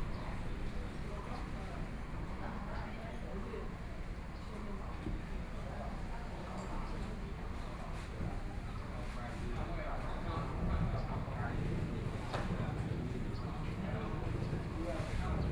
橋頭區橋南村, Kaohsiung City - Ciaotou Sugar Refinery
Many tourists, Sitting next to the ice cream shop, Birds